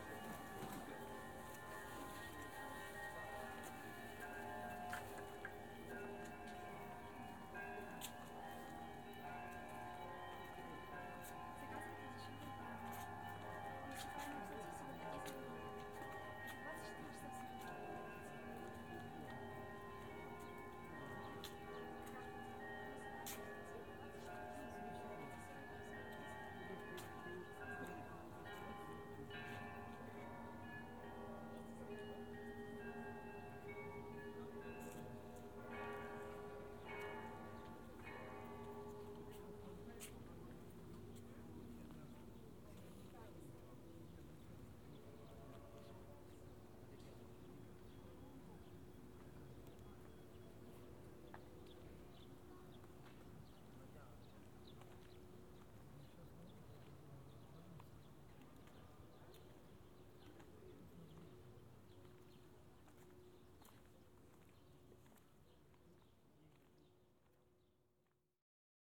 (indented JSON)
{
  "title": "Lavrska St, Kyiv, Ukraina - the sound of bells",
  "date": "2017-08-13 16:42:00",
  "description": "the sound of bells-binaural recording",
  "latitude": "50.44",
  "longitude": "30.56",
  "altitude": "193",
  "timezone": "Europe/Kiev"
}